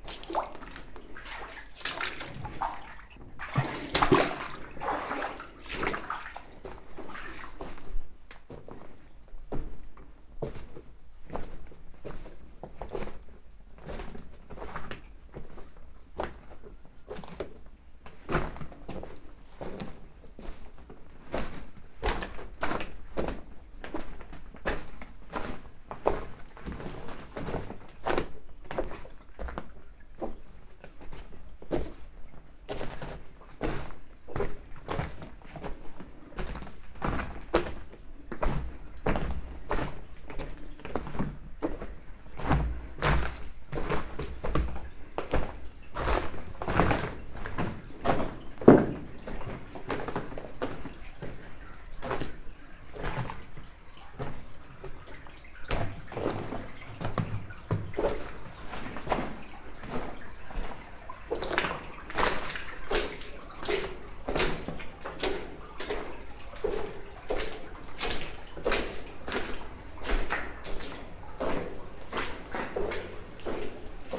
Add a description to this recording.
Walking in the old mine, into the mud, the water and the old stones.